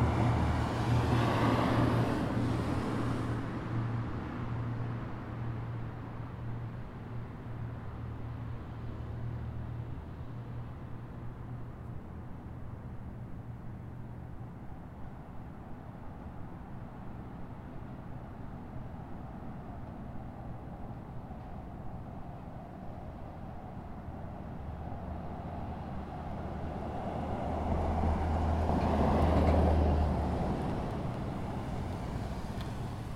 {
  "title": "N Nevada Ave, Colorado Springs, CO, USA - Shovel Chapel East",
  "date": "2018-04-26 22:33:00",
  "description": "Recorded behind Shove Chapel, on the east side, using a Zoom H2 recorder.\nCars are the main focus of the recording, with lots of variation.",
  "latitude": "38.85",
  "longitude": "-104.82",
  "altitude": "1846",
  "timezone": "America/Denver"
}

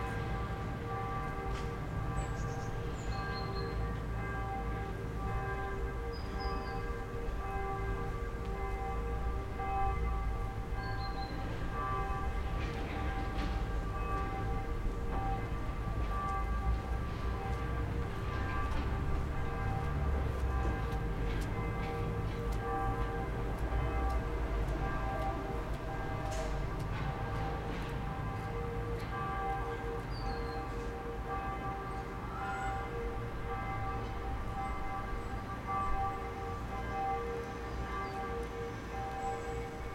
{
  "title": "leipzig lindenau, am karl-heine-kanal. - leipzig lindenau, am karl-heine-anal.",
  "date": "2011-08-31 12:00:00",
  "description": "am karl-heine-kanal. vogelstimmen, passanten, bauarbeiten, mittagsglocken der nahen kirche.",
  "latitude": "51.33",
  "longitude": "12.33",
  "altitude": "117",
  "timezone": "Europe/Berlin"
}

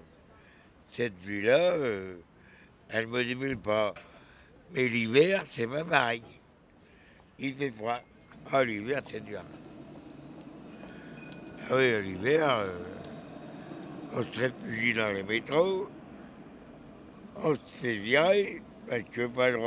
{
  "title": "Pl. Raspail, Lyon, France - Lyon - Gilles - SDF",
  "date": "2014-11-20 10:30:00",
  "description": "Lyon\nGilles - SDF",
  "latitude": "45.76",
  "longitude": "4.84",
  "altitude": "175",
  "timezone": "Europe/Paris"
}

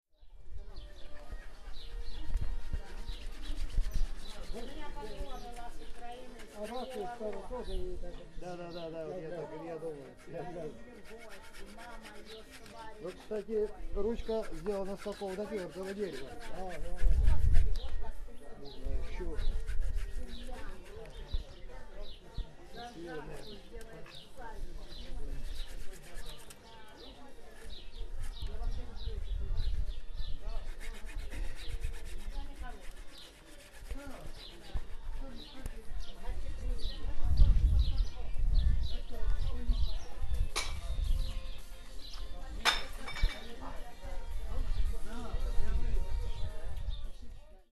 {
  "title": "local russians talking and sawing their axe head",
  "date": "2011-04-19 14:34:00",
  "description": "local russians talking while sawing off the axe head from the stick. at Baltimarket, Baltijaam. (jaak sova)",
  "latitude": "59.44",
  "longitude": "24.73",
  "altitude": "18",
  "timezone": "Europe/Tallinn"
}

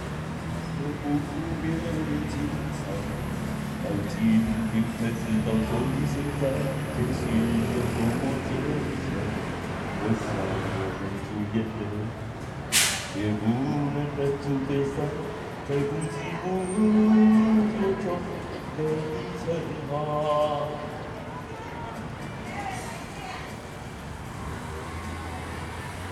{
  "title": "Renhe St., Sanchong Dist., New Taipei City - Near Market",
  "date": "2012-02-10 12:51:00",
  "description": "Near Market, Someone singing, Traffic Sound\nSony Hi-MD MZ-RH1 +Sony ECM-MS907",
  "latitude": "25.07",
  "longitude": "121.50",
  "altitude": "12",
  "timezone": "Asia/Taipei"
}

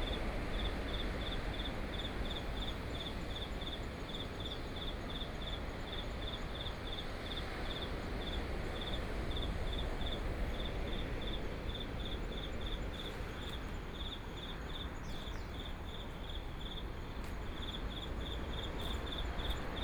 {
  "title": "頭城鎮大里里, Yilan County - Insects sound",
  "date": "2014-07-21 16:31:00",
  "description": "At the roadside, Traffic Sound, Sound of the waves, The sound of a train traveling through, Very hot weather, Insects sound, Birdsong, Under the tree\nSony PCM D50+ Soundman OKM II",
  "latitude": "24.97",
  "longitude": "121.92",
  "altitude": "18",
  "timezone": "Asia/Taipei"
}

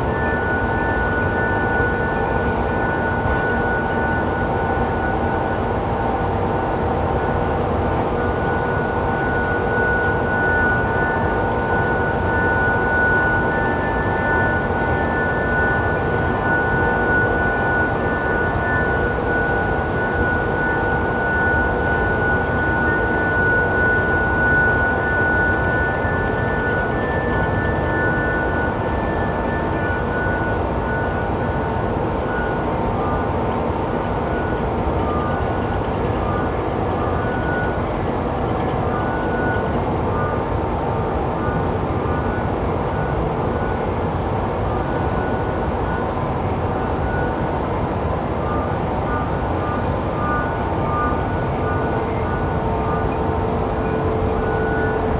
{
  "title": "Grevenbroich, Germany - Harmonic tones from massive coal conveyer belts",
  "date": "2012-11-02 13:38:00",
  "description": "Sometimes the massive conveyor belt systems produce these musical harmonics, but not all the time. How or why is completely unclear. Heard from a few kilometers away they sound like mysterious very distant bells.",
  "latitude": "51.07",
  "longitude": "6.54",
  "altitude": "73",
  "timezone": "Europe/Berlin"
}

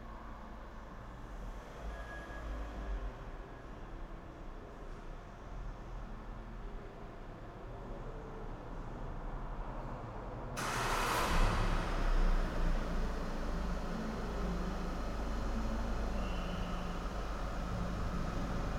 {
  "title": "porto, maus habitos - garage 3rd floor",
  "date": "2010-10-14 18:00:00",
  "description": "garage 3rd floor haus habitos, sounds and echos from the futureplaces festival",
  "latitude": "41.15",
  "longitude": "-8.61",
  "altitude": "100",
  "timezone": "Europe/Lisbon"
}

